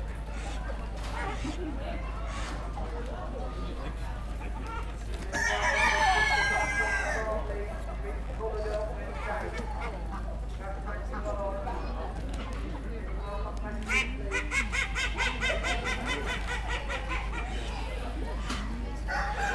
Heygate Bank, Pickering, UK - Rosedale Show ... the fur and feather tent ...
Inside the fur and feather tent ... open lavaliers clipped to baseball cap ... background noise from voices ... creaking marquee ... and the ducks ... chickens and other animals present ...
19 August 2017